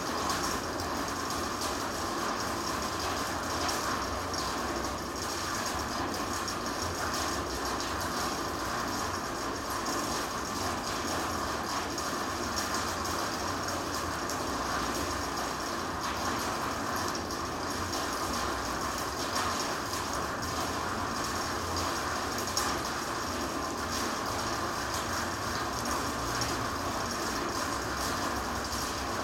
San Jacinto de Buena Fe, Ecuador - Buena Fe and the rain.
It rained all night, by dawn it went calm but not as much as I wanted to. Still I recorded the rain hitting the metalic roof.